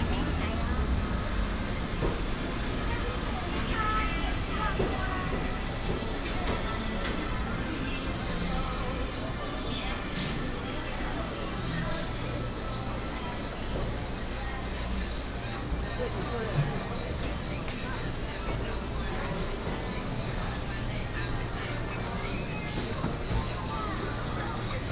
大竹黃昏市場, Luzhu Dist., Taoyuan City - evening market
Traditional evening market, traffic sound